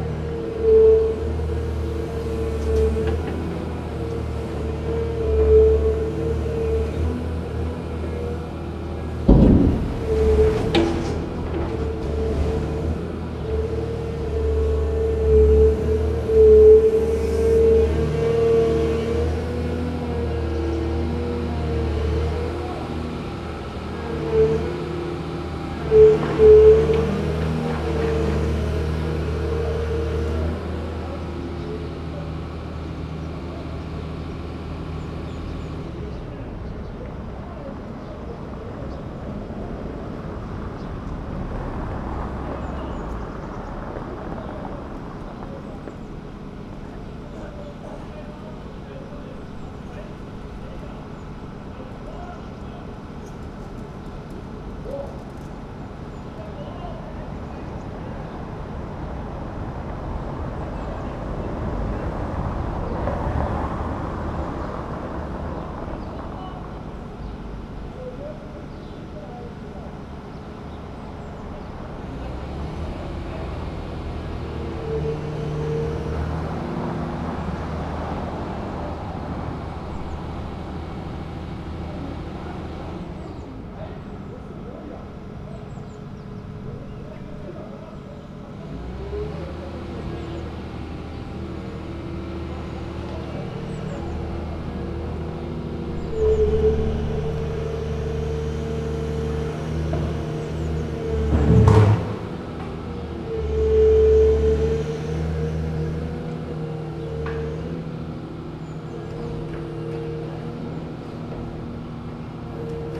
berlin: manitiusstraße - the city, the country & me: demolition of a supermarket
grab excavator demolishes the roof of a supermarket
the city, the country & me: january 23, 2012